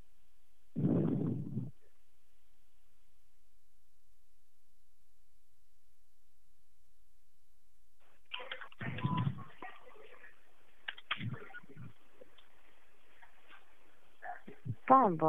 Mountain View, CA, USA - Google Maps crank call
Ok, probably not from Google Maps, but these clever cranks are using a number which appears to originate from Google's HQ in Mountain View. If anyone can understand the language spoken, I'd be curious to know what she / he / it is saying. Slightly creeped out by this one, I gotta say, especially with all the news of mil intel cyber attacks from North Korea and China these days.